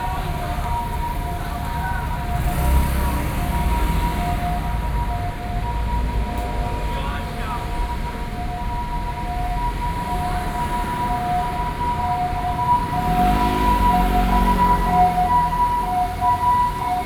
Ln., Guangzhou St., Wanhua Dist., Taipei City - Ambulance sound
4 December 2012, 18:42